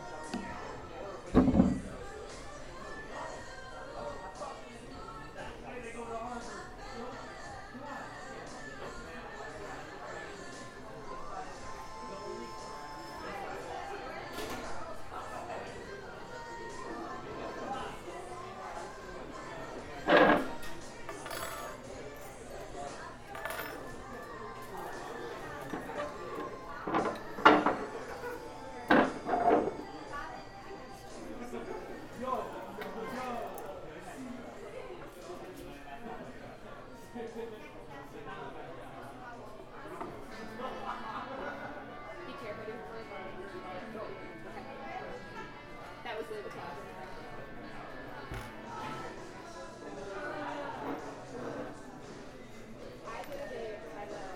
Appleton, WI, USA - Café
The sounds of the café during the dinner rush. The muzak starts immediately, at 0:25 you hear chair or table scrapes. At around 0:41, the background sound changes.
28 October